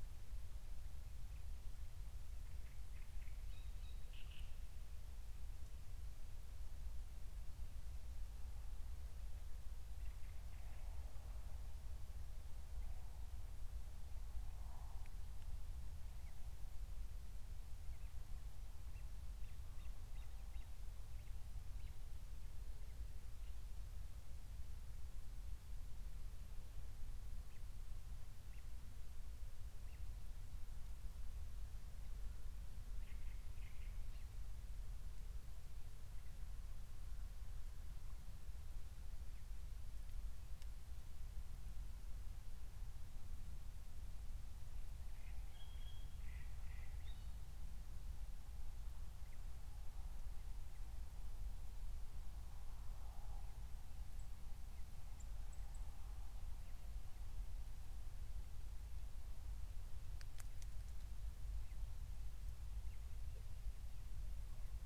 00:00 Berlin, Buch, Mittelbruch / Torfstich 1
Deutschland